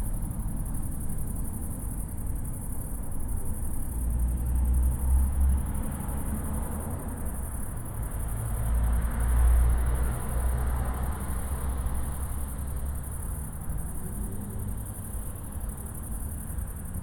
Recording of a summer night crickets with a train in the middle and other occasional city sounds like cars.
AB stereo recording (17cm) made with Sennheiser MKH 8020 on Sound Devices Mix-Pre6 II.